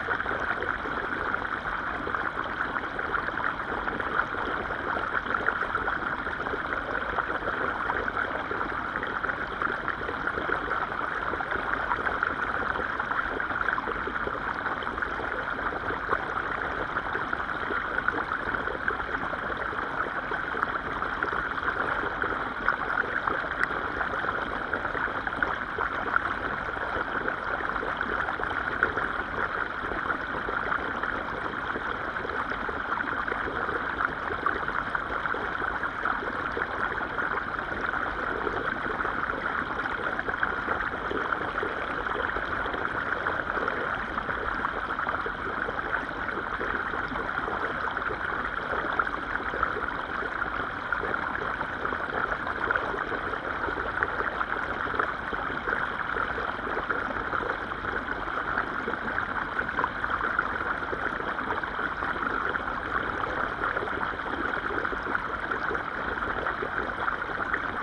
Utena, Lithuania, underwater at sinking pipe
hydrophones at the sinking pipe of the fountain